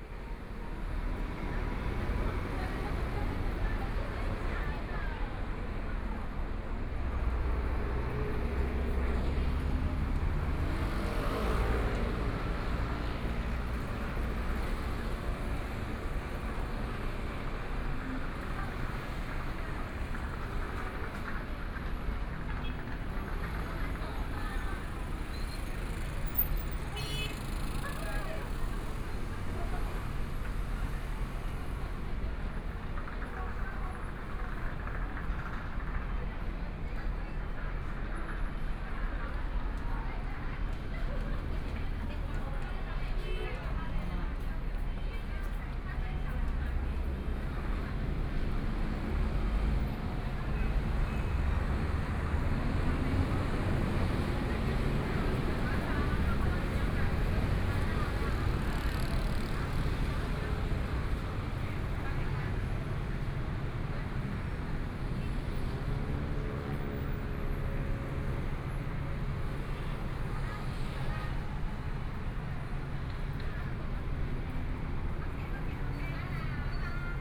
Nanjing E. Rd., Zhongshan Dist. - on the Road
Walking on the road, Various shops voices, （Nanjing E. Rd., Zhongshan Dist.）from Songjiang Rd.to Jianguo N. Rd., Traffic Sound, Binaural recordings, Zoom H4n + Soundman OKM II